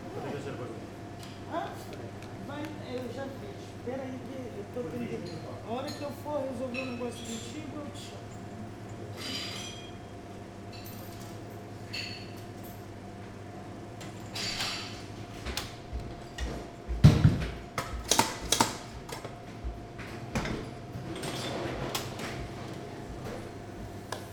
coffee break in Cafe a Brasileira. Fernando Pessoa had some drinks here too. poor Pessoa now has to sit forever in front of the cafe, as a bronze statue.
Lisbon, Portugal, 3 July 2010, 10:00